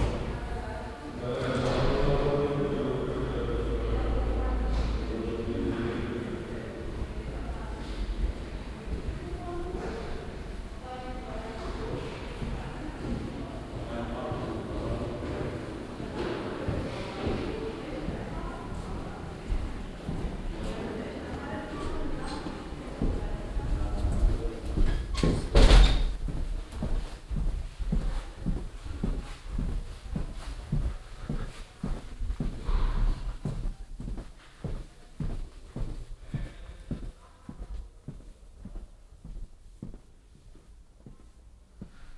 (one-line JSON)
{"title": "mettmann, neanderstrasse, rathaus", "description": "aufnahme im rathaus, morgens, schritte in fluren, türen, stimmen, aufzugfahrt\n- soundmap nrw\nproject: social ambiences/ listen to the people - in & outdoor nearfield recordings", "latitude": "51.25", "longitude": "6.97", "altitude": "135", "timezone": "GMT+1"}